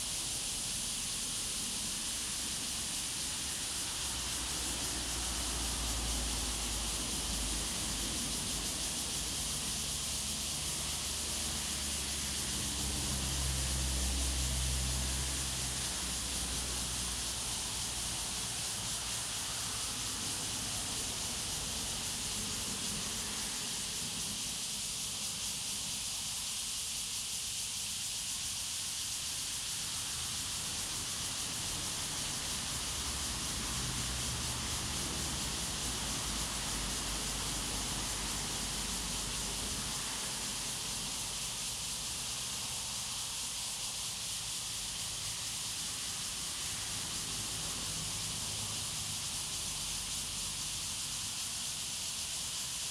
瑞豐村, Luye Township - Cicadas sound
Cicadas sound, Birdsong, Traffic Sound, In the woods
Zoom H2n MS+ XY